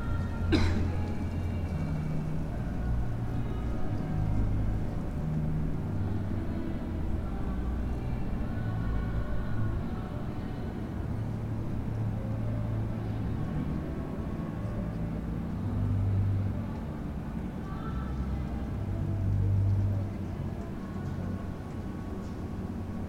Ulica slovenske osamosvojitve, Maribor, Slovenia - corners for one minute
one minute for this corner: Ulica slovenske osamosvojitve
22 August 2012, 22:32